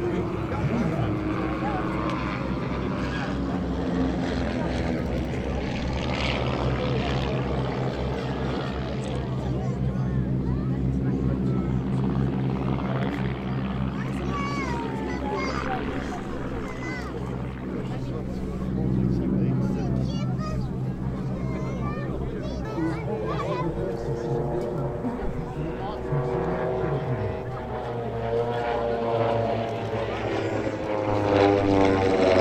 Tréméloir, France - air show
On a sunday at the Air-show, walking through the crowd. Bretiling planes and other old flying machines sounds coming in and out.